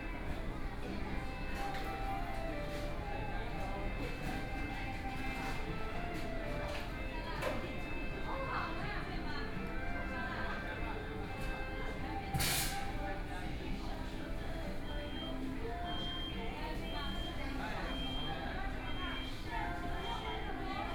五角場, Shanghai - Fast food（KFC）
sitting in the Fast food(KFC), The crowd, Binaural recording, Zoom H6+ Soundman OKM II